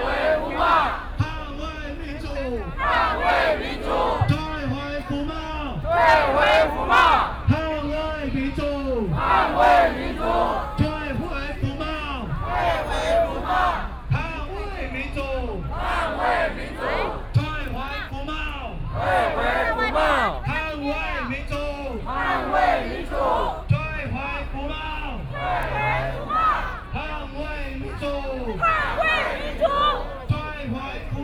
Zhongxiao E. Rd., Taipei City - Occupied Executive Yuan
University students occupied the Executive Yuan
Binaural recordings
Zhongzheng District, Taipei City, Taiwan, 23 March 2014, 21:00